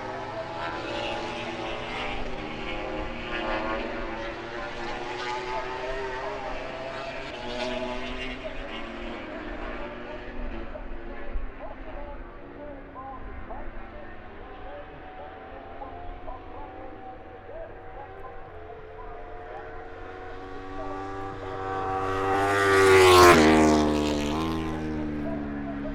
Silverstone Circuit, Towcester, UK - British Motorcycle Grand Prix 2018 ... moto grand prix ...
British Motorcycle Grand Prix 2018 ... moto grand prix ... qualifying one ... maggotts ... lavalier mics clipped to baseball cap ...
25 August 2018, ~14:00